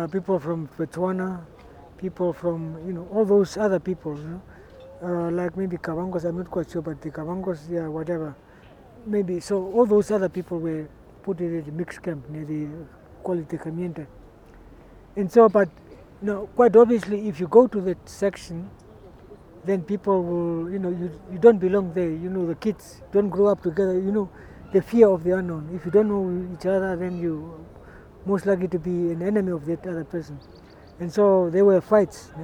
Joe lives in Katutura and describes it to me...
Windhoek, Namibia, 7 January 2009, ~18:00